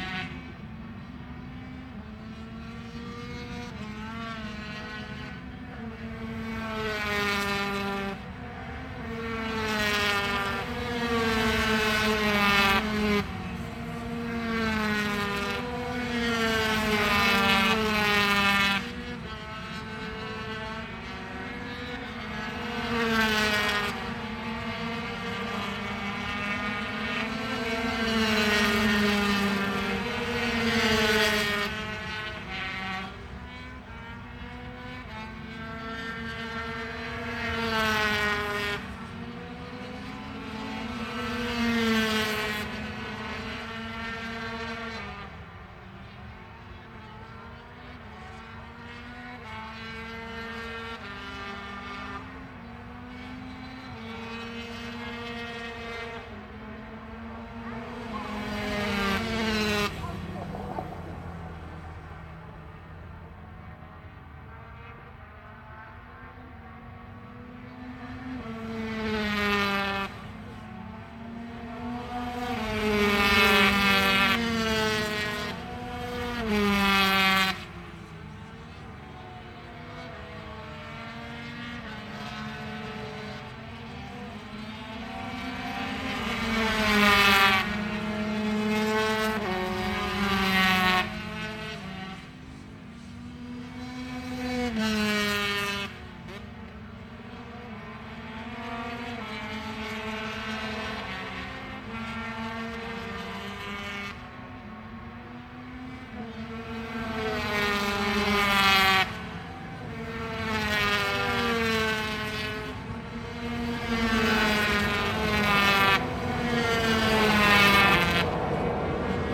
Donington Park Circuit, Derby, United Kingdom - british motorcycle grand prix 2005 ... 125 ...
british motorcycle grand prix 2005 ... 125 qualifying ... one point stereo mic to mini disk ...
22 August 2005, 09:00